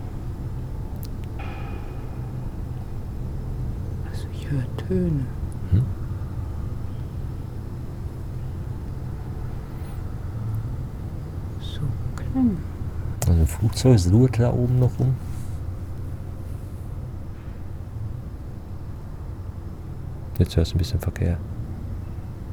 St. Barbara-Klinik Hamm-Heessen, Am Heessener Wald, Hamm, Germany - Ralf Grote - radio at the edge of the forest...
We are with Ralf Grote, behind a window of the “St Barbara Hospital”… or better, one of the large windows of a Radio studio of the “Bürgerfunk” (community radio) of the city. What makes this place so special that Ralf can be found here, often on several evening of the week, after work, making radio. Ralf beginning to tell, and opens the window to the forest…
The “Radio Runde Hamm” (RRH) is an open studio, where residents can come to make Radio programmes, or learn how to do it. A group of radio-enthusiasts between 17 and 70 years old is “running the show”, assisting and training new-comers. Ralf Grote is part of this since 1999, today he’s the studio manager…
Wir stehen mit Ralf Grote an einem Fenster der “Barbaraklinik” … oder besser, des Studios der Radio Runde Hamm. Was macht diesen Ort so besonders, dass Ralf hier mehrmals in der Woche abends, nach getaner Arbeit noch hier zu finden ist…? Ralf erzählt und öffnet das Fenster zum Wald….